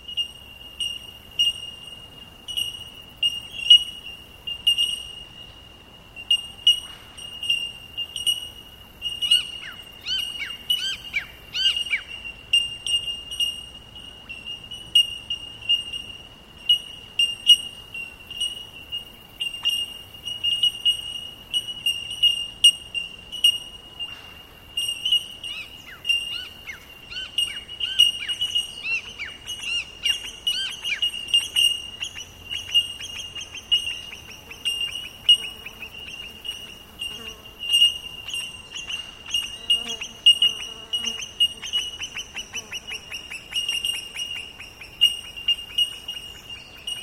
Border Loop National Park, Nimbin, Bellbirds
A recording of bellbirds on the road driving through Border Loop National Park; an ancient caldera in the hinterland near Nimbin, Australia.